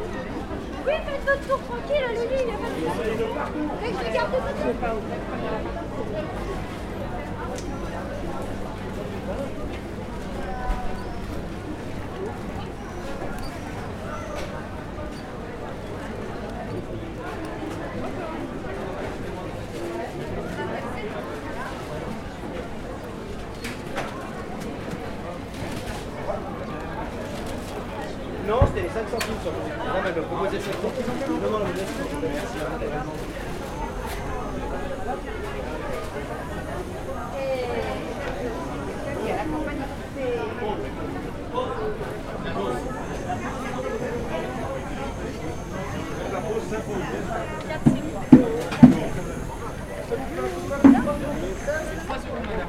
{
  "title": "Pl. Georges Clemenceau, Aix-les-Bains, France - Le marché du mercredi",
  "date": "2022-07-06 11:30:00",
  "description": "Parcours dans la halle et à l'extérieur du marché très animé actuellement approche de la camionnette du rémouleur. ZoomH4npro à la main gauche.",
  "latitude": "45.69",
  "longitude": "5.91",
  "altitude": "249",
  "timezone": "Europe/Paris"
}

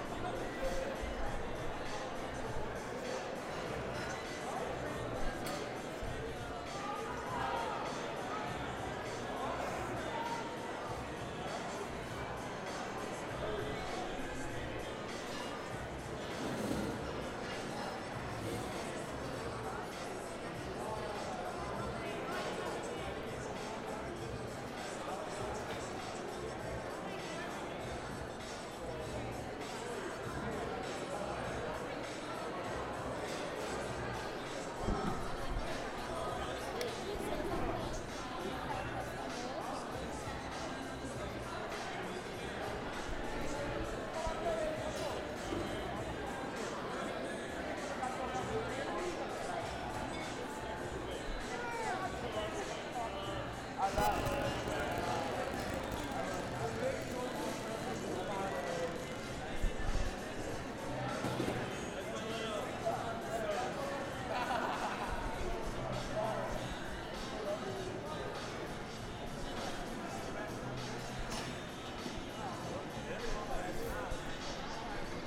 {
  "title": "The College of New Jersey, Pennington Road, Ewing Township, NJ, USA - Eickhoff Dining Hall",
  "date": "2014-03-16 19:30:00",
  "description": "During the time of recording, the Eickhoff dining hall was packed full of student eating dinner.",
  "latitude": "40.27",
  "longitude": "-74.78",
  "timezone": "America/New_York"
}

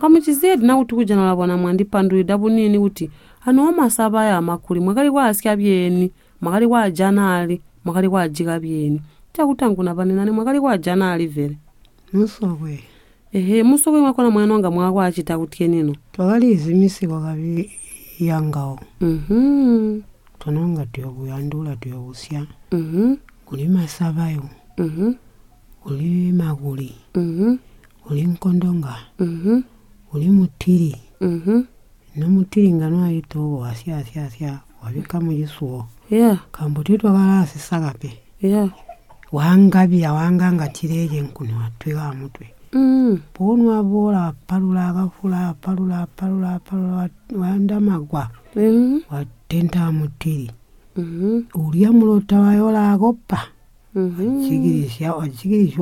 {
  "title": "Chinonge, Binga, Zimbabwe - Banene, what are the bush fruits you used to eat...",
  "date": "2016-07-17 10:30:00",
  "description": "Eunice asks her grandmother about how the BaTonga used to survive in the bush after their forceful removal from the fruitful land of their ancestors at the Zambezi. Her grandmother lists some of the bush fruits they used to collect like Makuli or Mutili and describes how they used to prepare them for dishes...\na recording from the radio project \"Women documenting women stories\" with Zubo Trust.\nZubo Trust is a women’s organization in Binga Zimbabwe bringing women together for self-empowerment.",
  "latitude": "-17.99",
  "longitude": "27.45",
  "altitude": "840",
  "timezone": "GMT+1"
}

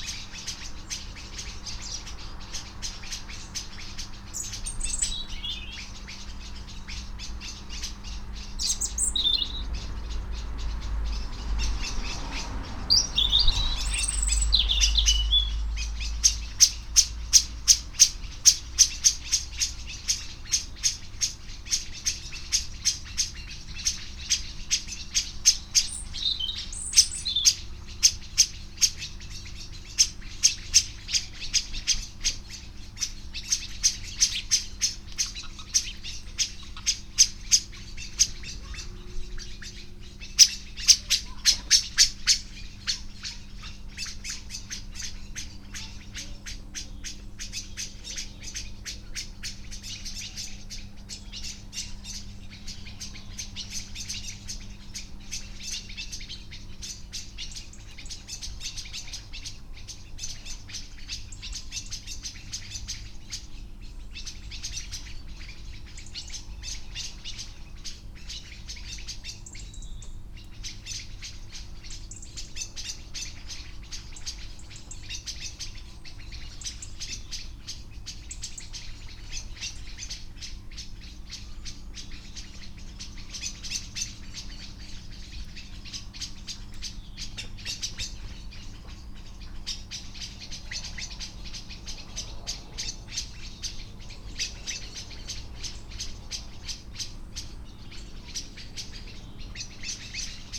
{
  "title": "Post Box, Malton, UK - Blackbird dusk ...",
  "date": "2019-10-28 16:50:00",
  "description": "Blackbird dusk ... SASS ... bird calls from ... house sparrow ... robin ... tawny owl ... starling ... plenty of traffic noise ...",
  "latitude": "54.12",
  "longitude": "-0.54",
  "altitude": "76",
  "timezone": "Europe/London"
}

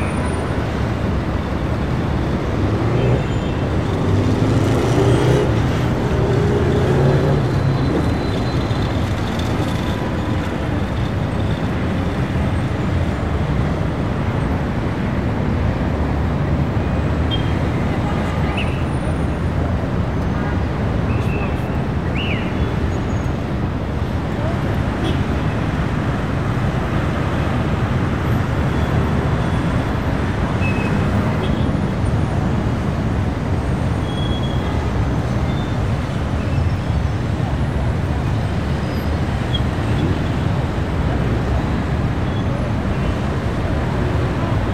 {
  "title": "paris, arc de triomphe, traffic",
  "date": "2009-12-12 13:27:00",
  "description": "afternoon traffic surrounding the monument with occassional whistles of a police man\ninternational cityscapes - topographic field recordings and social ambiences",
  "latitude": "48.87",
  "longitude": "2.30",
  "altitude": "70",
  "timezone": "Europe/Berlin"
}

